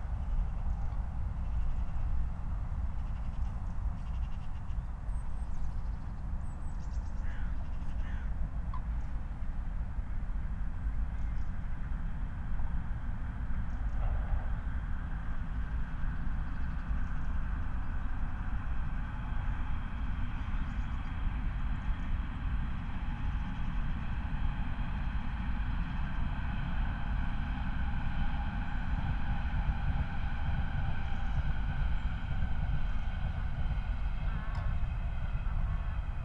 Moorlinse, Berlin Buch - near the pond, ambience
11:19 Moorlinse, Berlin Buch
Deutschland, December 2020